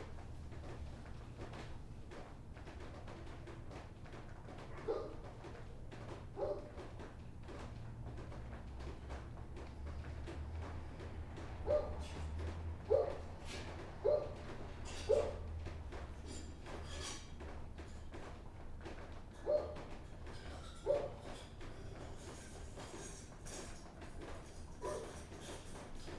3136 Rosa Parks
Rubbing ash from a house fire. Two condenser mics and a contact mic through a bullhorn.
Detroit, MI, USA